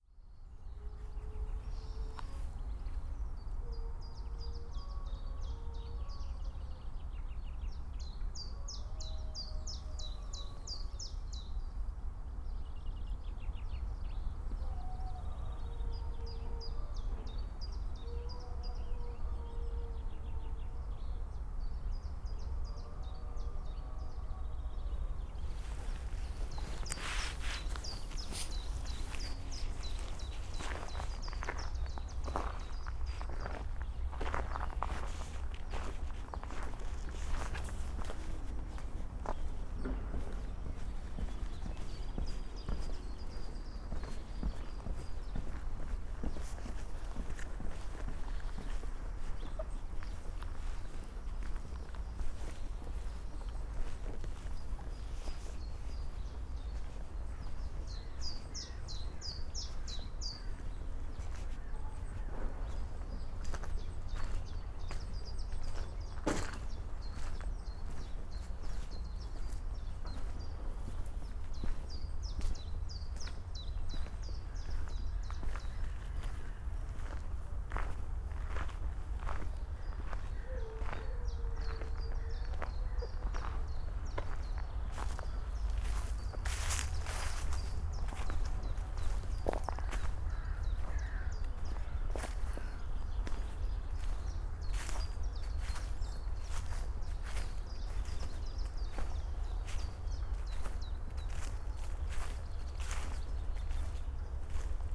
Borschemich, Erkelenz, Alter Kirchweg - slow walk
Borschemich, half abandoned village, slow walk along Alter Kirchweg, trying to approach the howling dog.
(tech: SD702, DPA4060)
Erkelenz, Germany, 3 April, 14:00